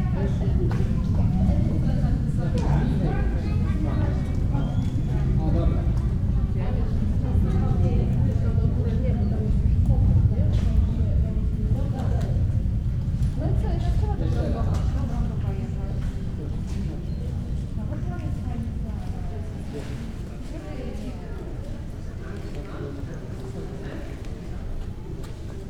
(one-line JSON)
{"title": "Reszel, Poland, in the castle yard", "date": "2014-08-12 12:20:00", "description": "ambience of castle yard disturbed by plane", "latitude": "54.05", "longitude": "21.15", "altitude": "118", "timezone": "Europe/Warsaw"}